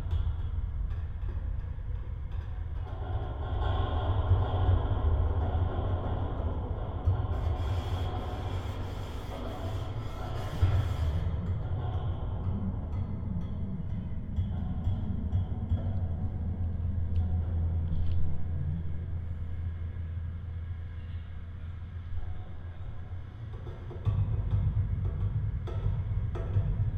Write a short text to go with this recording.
Nagranie zrealizowane mikrofonami kontaktowymi. Spacery Dźwiękowe w ramach pikniku Instytutu Kultury Miejskiej